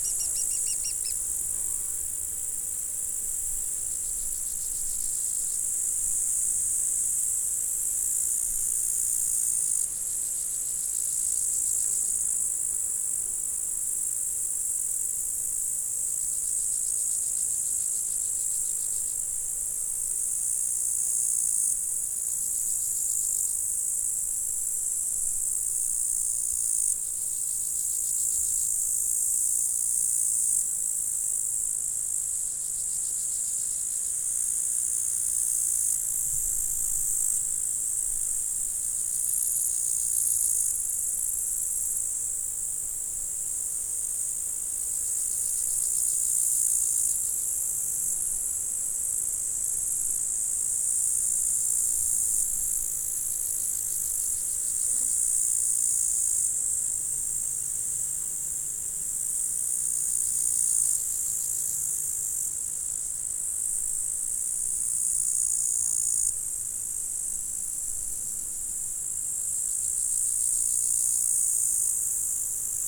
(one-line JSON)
{"title": "Chindrieux, France - prairie zen", "date": "2022-07-10 18:00:00", "description": "Au bord de la route du col du Sapenay une prairie sèche très diversifiée entourée de forêt, combinaisons rythmiques favorables à l'apaisement . Passage d'une voiture en descente et d'un scooter en montée.", "latitude": "45.83", "longitude": "5.87", "altitude": "846", "timezone": "Europe/Paris"}